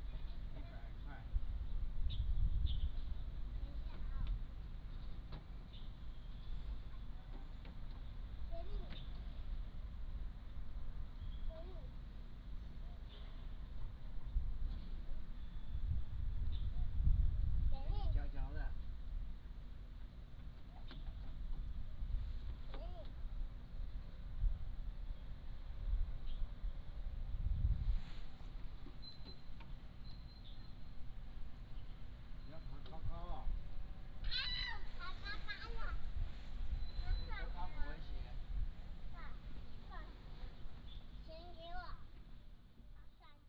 介壽澳口公園, Nangan Township - Children's play area
in the Park, Children's play area, Birds singing